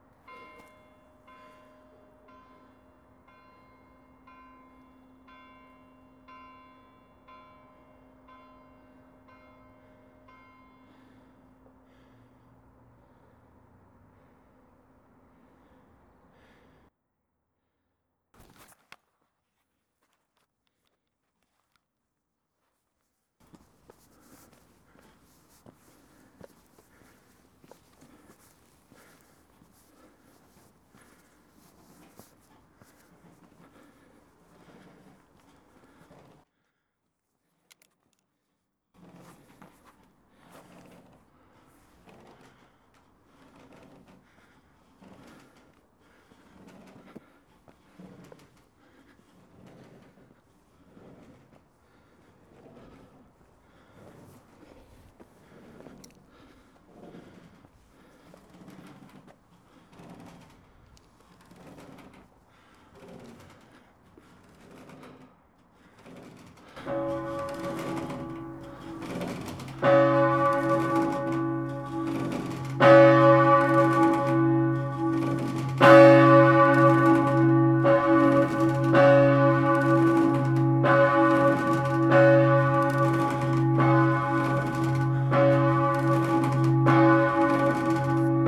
la cloche appelle les fidèles pour la messe de 9h00
the bell calls the faithful for the Mass of 9:00